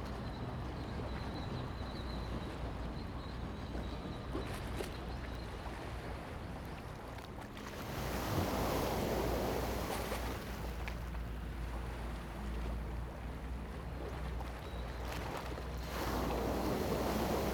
開元港, Koto island - Small port
Small port, Traffic Sound, Sound tide
Zoom H2n MS +XY